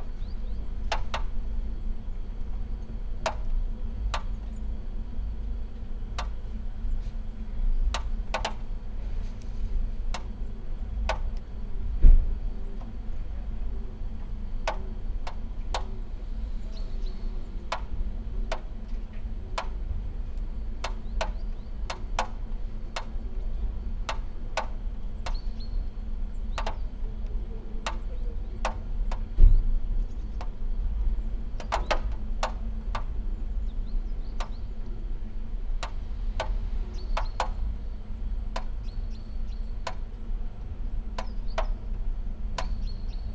light snow, drops, creaky doors
Maribor, Slovenia, 18 January 2013